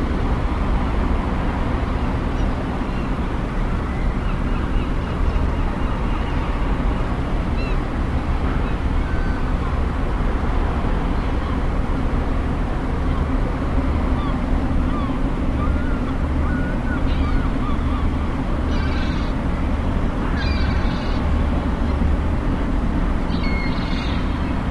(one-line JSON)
{"title": "Calais, ferry docks", "date": "2009-04-15 21:23:00", "description": "Calais, parking facing the ferry docks. Zoom H2.", "latitude": "50.96", "longitude": "1.85", "timezone": "Europe/Berlin"}